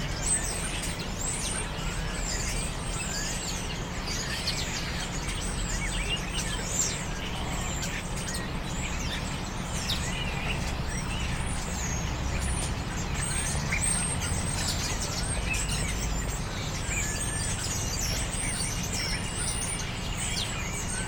Starlings on Winter evenings in a tree at St. Mary's Butts, Reading, UK - Roosting Starlings
I had noticed on several trips into town that of an evening a certain tree fills with roosting Starlings. The noise is quite amazing of these tiny birds, all gathering in the tree together. In Winter their collected voices offer a sonic brightness to countenance the dismal grey and early darkness of the evenings. To make this recording I stood underneath the tree, very still, listening to the birds congregating in this spot. You can hear also the buses that run past the church yard where the tree stands, and pedestrians walking on the paths that flank it. Recorded with the on board microphones of the EDIROL R-09.